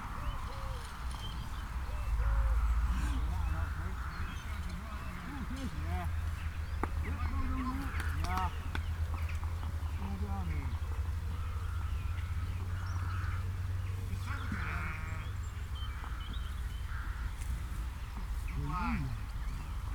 Playing bowls with a cow chorus ... bowls rink is in a field with a large herd of cattle ... open lavalier mics clipped to sandwich box ... bird calls ... jackdaw ... house martins ... pied wagtail ... traffic noise ...